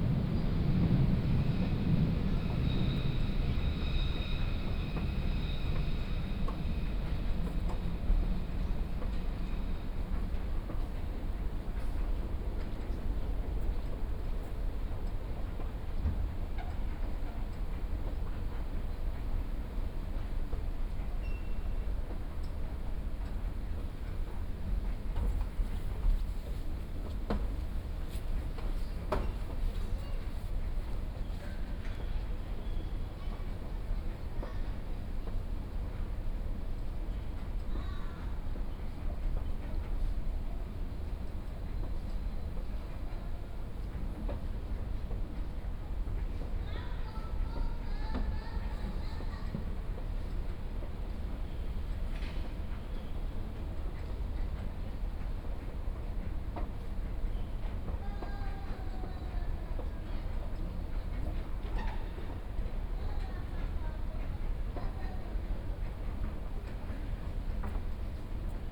U-Bahn, Breslauer Platz, Köln - subway station ambience
station ambience at platform, 3rd level below ground.
(Sony PCM D50, OKM2)